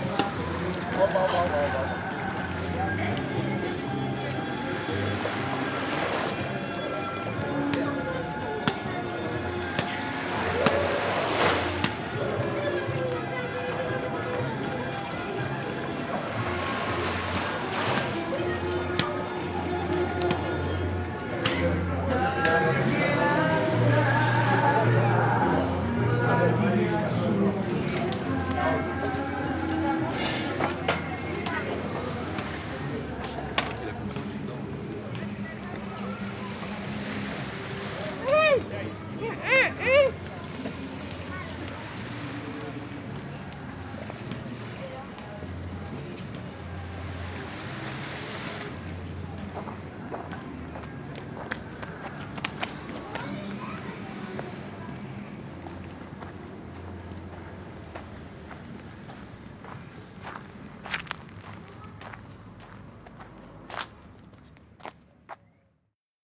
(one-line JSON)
{"title": "Aliki, Grèce - Aliki", "date": "2012-08-09 13:46:00", "description": "Small port in Parros Island.\nRestaurant, music and people playing racket ball on the beach.", "latitude": "37.00", "longitude": "25.14", "altitude": "4", "timezone": "Europe/Athens"}